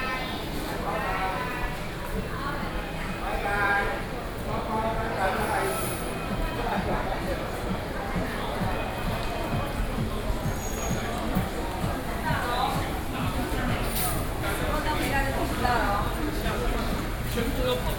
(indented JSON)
{
  "title": "Huaxi St., Wanhua Dist., Taipei City - SoundWalk",
  "date": "2012-10-31 19:17:00",
  "latitude": "25.04",
  "longitude": "121.50",
  "altitude": "15",
  "timezone": "Asia/Taipei"
}